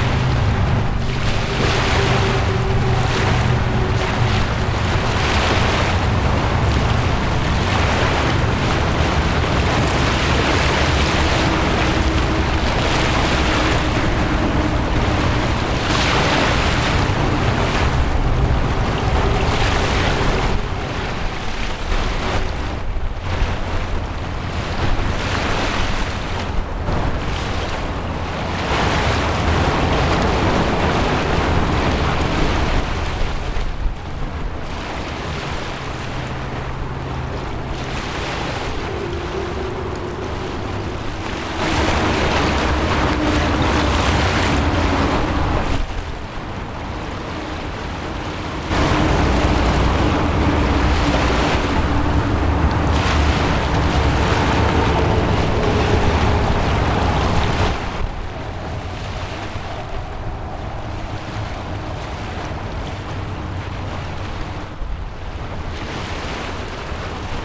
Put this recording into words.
Recorded the noise of the sea, waves and motorway at a remote beach under the motorway on the North Shore.